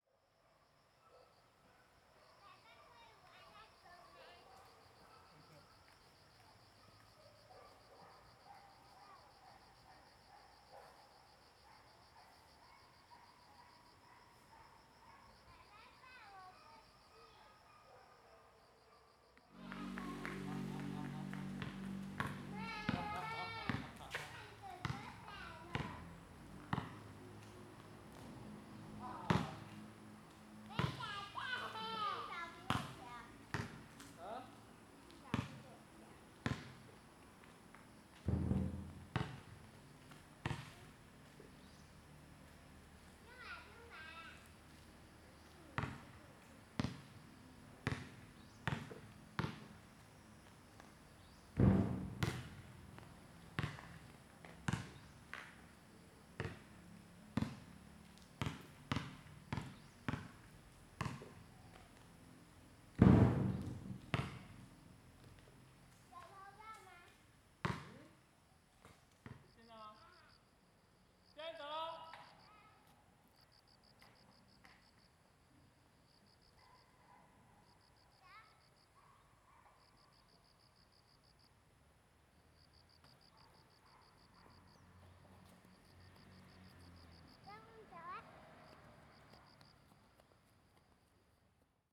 Shuei-Wei Elementary School, Puli, Taiwan - Dad with kids
After class, the father and the child's time.
Zoon H2n MX+XY (2015/9/25 009), CHEN, SHENG-WEN, 陳聖文